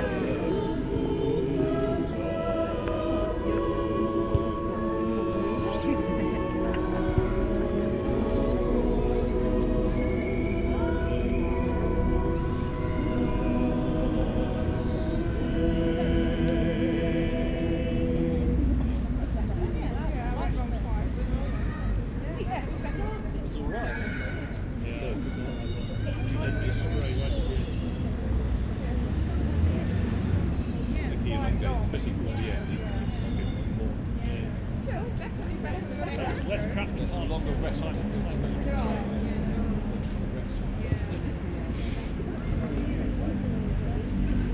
Some ambient sound from a sunny day at the beginning of autumn in the park. Lots of nice variation of sounds, people talking, walking, kids playing, birds, and a choir practicing.
Recorded using the internal XY + Omni mics on the Roland R26
1 September 2020, ~19:00